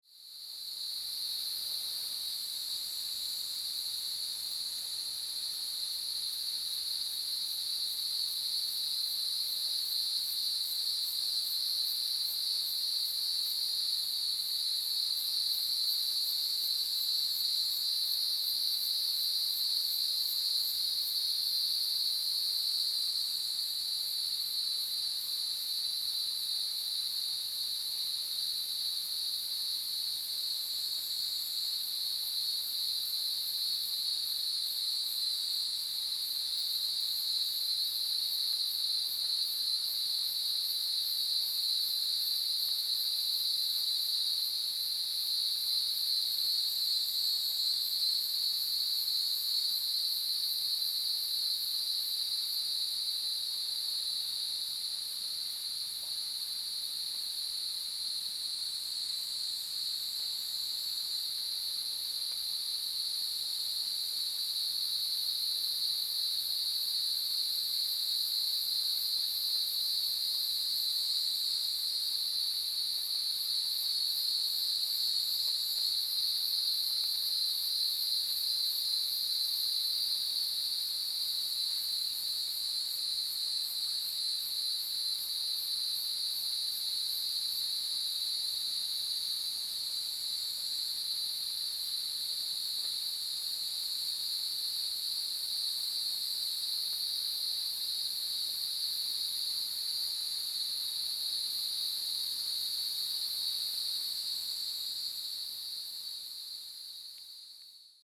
魚池鄉五城村, Taiwan - Cicada and stream sounds
Cicada and stream sounds
Zoom H2n Spatial audio
Yuchi Township, 華龍巷43號, 14 July 2016